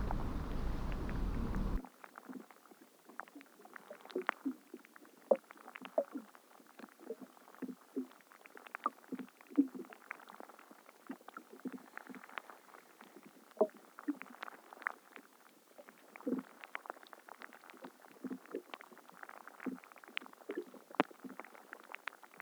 hydrophones & stereo microphone